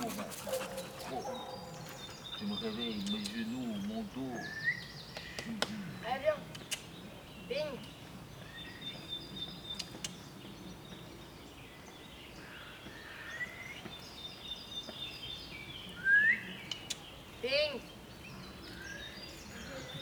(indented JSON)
{
  "title": "Avenue Nekkersgat, Uccle, Belgique - cars are back",
  "date": "2020-04-15 18:32:00",
  "latitude": "50.79",
  "longitude": "4.33",
  "altitude": "63",
  "timezone": "Europe/Brussels"
}